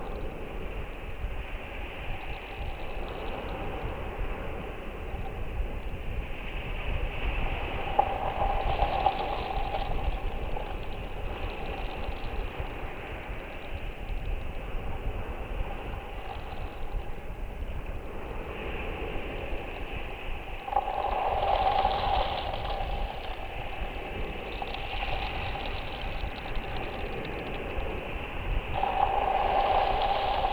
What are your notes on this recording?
Waves and small pebbles washing along a tree trunk half in the sea recorded with a contact mic. It is the same recording as in the mix above but heard on its own.